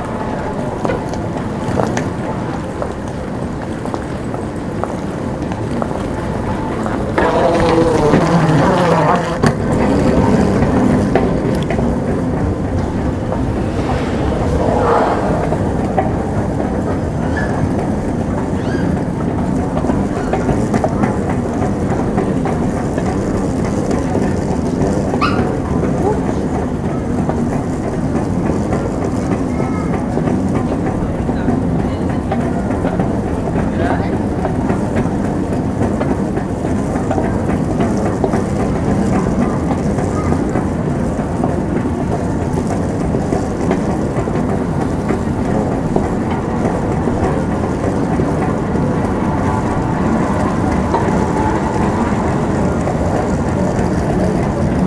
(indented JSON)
{
  "title": "Bruxelles Airport (BRU), Belgium - on the sliding carpets",
  "date": "2012-10-25 08:45:00",
  "description": "Brussels airport, passengers arriving at the terminal and carrying trolleys on the sliding carpets connecting the terminal with the departure gates",
  "latitude": "50.90",
  "longitude": "4.48",
  "altitude": "37",
  "timezone": "Europe/Brussels"
}